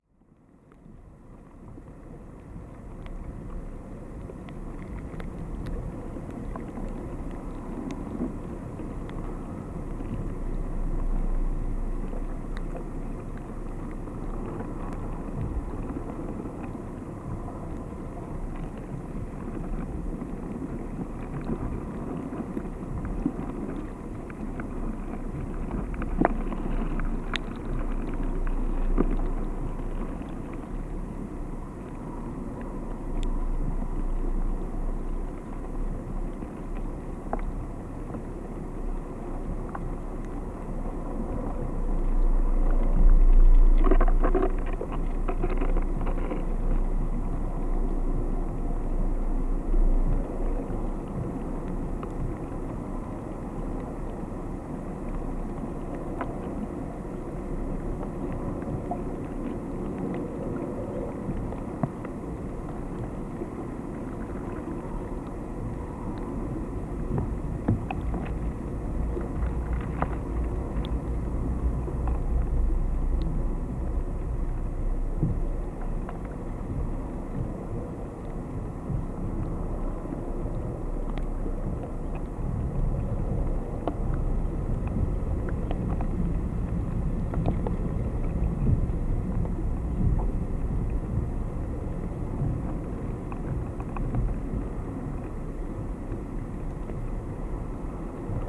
contact microphones placed on a wooden beam of abandoned warehouse